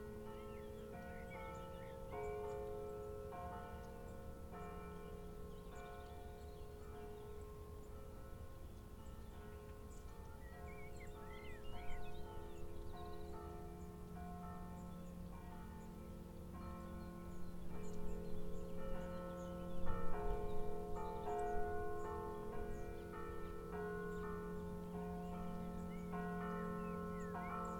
Monferran-Savès, France - Lockdown 1 km - noon - angelus rings (South)
Recorded during first lockdown, south of the village.
Zoom H6 capsule xy.
sun and puddles.
France métropolitaine, France